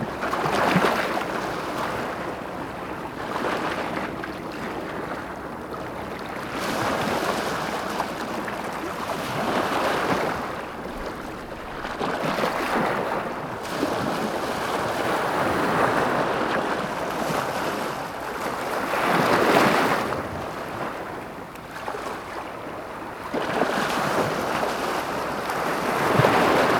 Saint-Jean-Cap-Ferrat, France - Gentle waves at Cap Ferrat
Recorded w/ a ZOOM H1